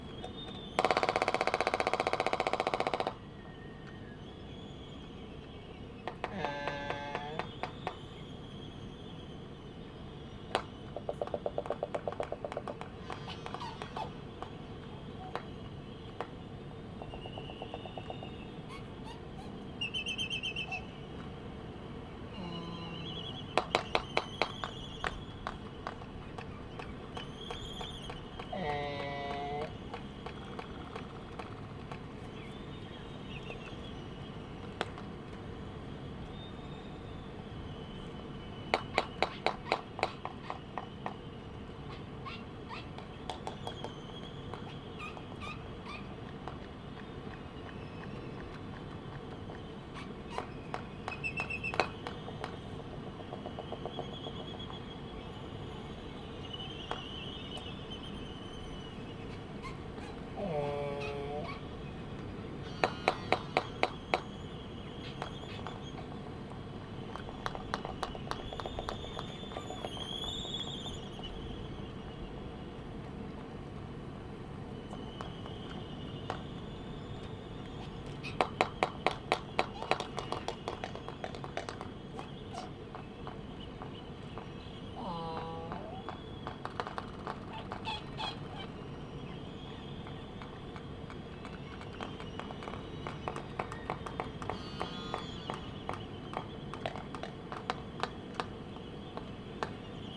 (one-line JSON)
{"title": "United States Minor Outlying Islands - Laysan albatross dancing ...", "date": "1997-12-25 11:00:00", "description": "Sand Island ... Midway Atoll ... laysan albatross dancing ... Sony ECM 959 one point stereo mic to Sony Minidisk ... background noise ...", "latitude": "28.22", "longitude": "-177.38", "altitude": "9", "timezone": "Pacific/Midway"}